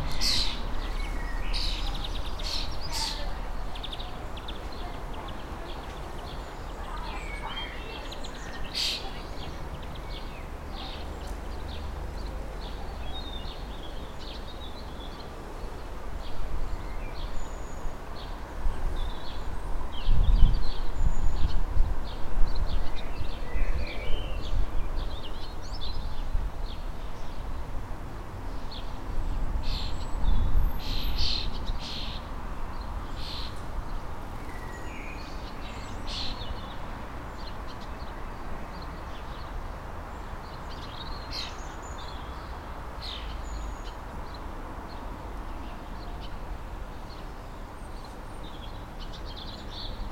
{"title": "Lodmoor Car Park, Weymouth, Dorset, UK - edge of car park", "date": "2013-04-28 16:34:00", "latitude": "50.62", "longitude": "-2.45", "altitude": "6", "timezone": "Europe/London"}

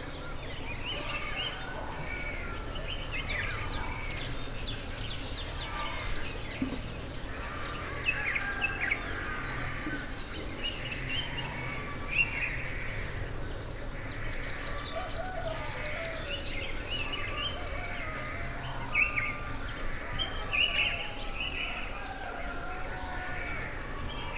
{"title": "Mission school guest house, Chikankata, Zambia - early morning Chikankata", "date": "2018-09-05 06:30:00", "description": "listening to morning bird song fading while daily life picking up around the guest house....", "latitude": "-16.23", "longitude": "28.15", "altitude": "1253", "timezone": "Africa/Lusaka"}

